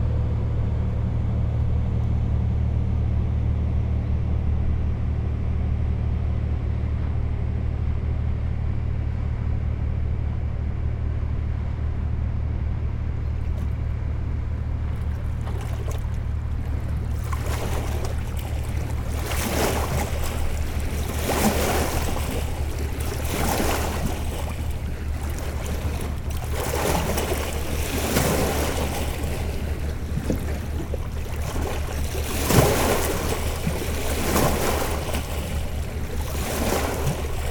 {"title": "La Grande-Paroisse, France - Boats on the Seine river", "date": "2016-12-28 08:55:00", "description": "Two boats passing by on the Seine river. The second one is called \"L'inattendu\". It means \"the unexpected\".", "latitude": "48.38", "longitude": "2.88", "altitude": "47", "timezone": "Europe/Berlin"}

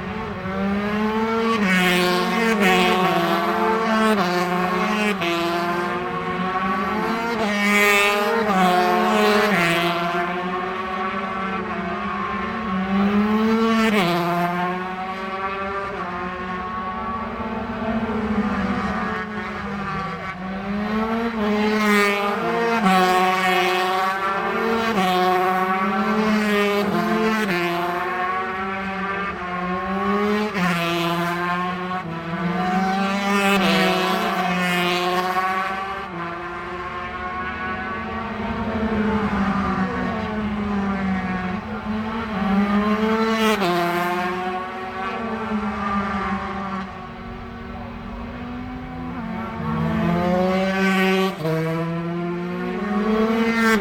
{"title": "Leicester, UK - british superbikes 2002 ... 125 ...", "date": "2002-09-14 13:15:00", "description": "british superbikes 2002 ... 125 qualifying ... mallory park ... one point stereo mic to minidisk ... date correct ... time not ...", "latitude": "52.60", "longitude": "-1.34", "altitude": "118", "timezone": "Europe/London"}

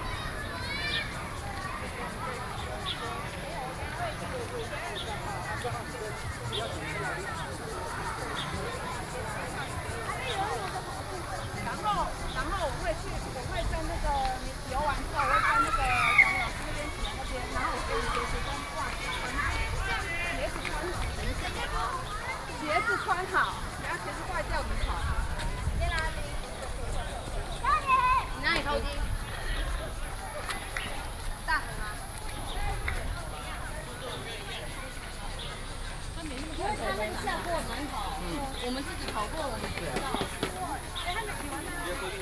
{"title": "Fuxinggang, Taipei - Triathlon", "date": "2013-07-07 10:14:00", "description": "Triathlon, Children's sports competitions, Sony PCM D50, Binaural recordings", "latitude": "25.14", "longitude": "121.49", "altitude": "16", "timezone": "Asia/Taipei"}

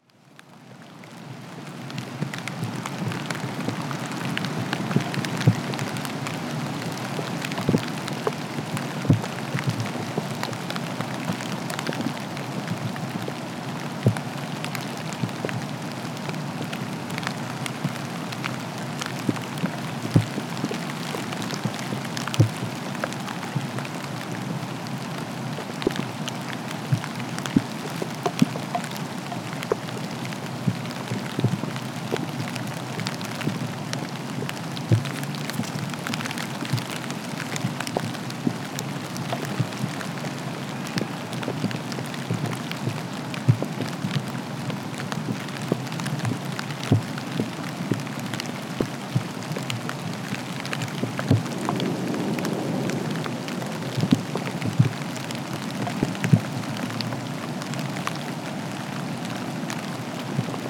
{"title": "Auf dem Sand, Dresden, Germany - (437 ORTF) Drizzle rain on a windy Sunday afternoon", "date": "2018-12-02 15:40:00", "description": "Recorder left in a slight drizzle, so there is plenty of peaks coming from recorder housing getting hit by raindrops.\nRecorded with Sony PCM D-100.", "latitude": "51.11", "longitude": "13.76", "altitude": "208", "timezone": "Europe/Berlin"}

